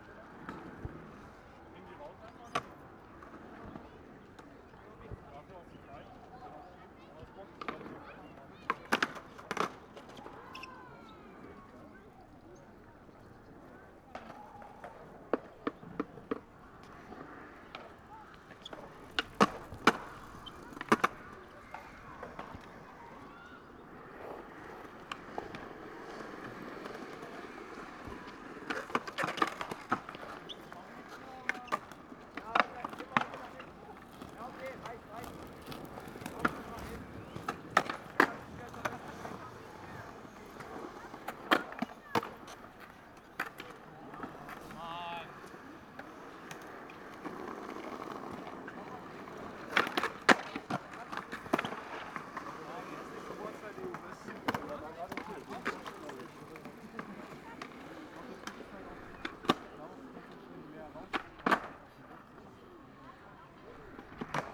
Walter-Friedrich-Str., Berlin Buch, Deutschland - skaterpark
Berlin Buch, the former derelict and abandoned skater park has been renovated. Though fenced because of Corona lockdown, many young people are practising on this Sunday afternoon in spring.
(Sony PCM D50)
19 April 2020